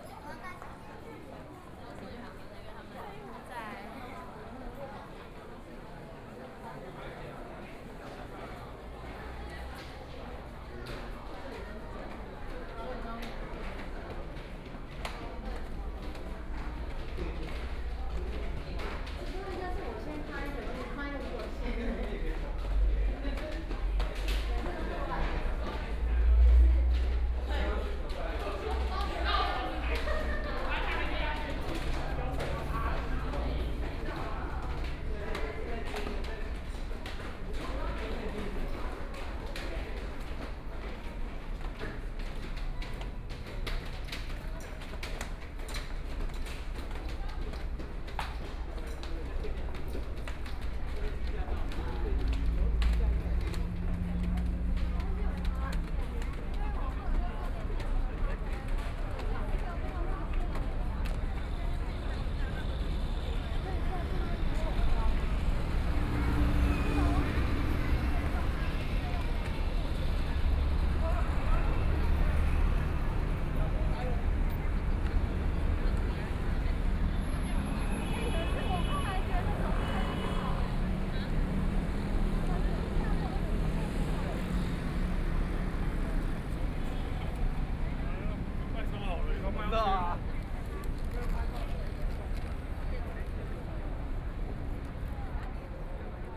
中正區黎明里, Taipei City - soundwalk
Pedestrian, Various shops voices, Walking through the underground mall, Walking through the station
Please turn up the volume a little
Binaural recordings, Sony PCM D100 + Soundman OKM II